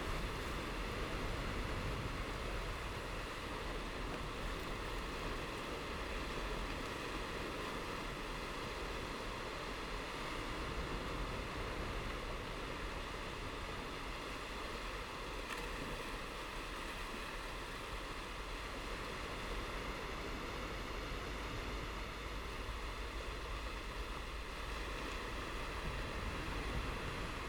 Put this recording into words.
Sound of the waves, Binaural recordings, Zoom H4n+ Soundman OKM II + Rode NT4